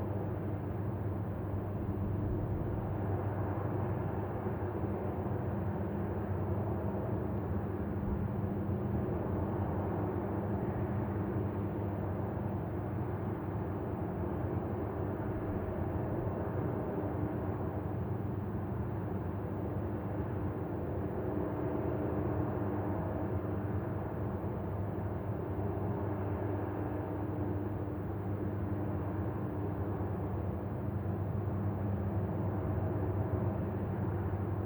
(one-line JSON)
{"date": "2014-02-11 10:30:00", "description": "Paradela, Salto de Castro, Portugal Mapa Sonoro do Rio Douro Douro River Sound Map", "latitude": "41.58", "longitude": "-6.19", "altitude": "665", "timezone": "Europe/Lisbon"}